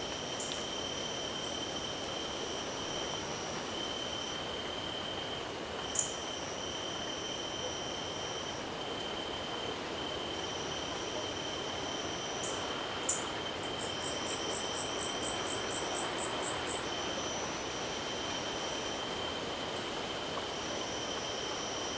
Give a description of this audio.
On the way to Pedra Bonita, very close from Rio de Janeiro, the night is arriving, some crickets and a light wind in the trees (with some squeaking wood). A few birds sometimes. Recorded by a MS Setup Schoeps CCM41+CCM8 in Cinela Zephyx Windscreen. Recorder Sound Devices 633.